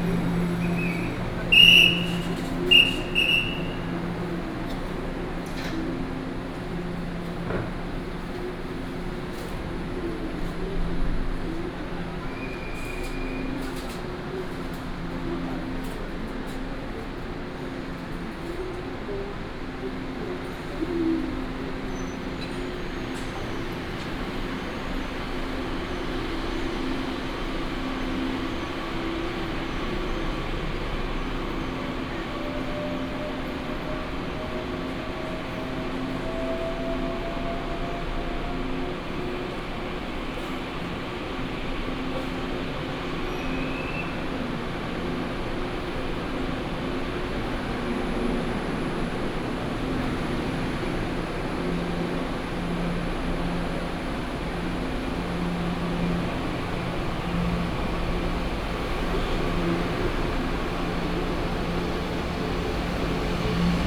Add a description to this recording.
in the station platform, The train travels, walking in the station